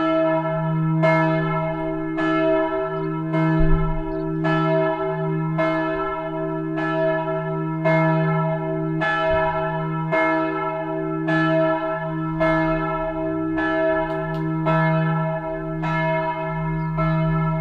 The church bells of Troisvierges. Here a recording of the 6pm bell play.
Troisvierges, Kirche, Glocken
Die Kirchenglocken von Troisvierges. Hier das Glockenspiel von 6 Uhr abends.
Troisvierges, église, cloches
Les cloches de l’église de Troisvierges. Voici l’enregistrement du carillon de 18h00.
Projekt - Klangraum Our - topographic field recordings, sound objects and social ambiences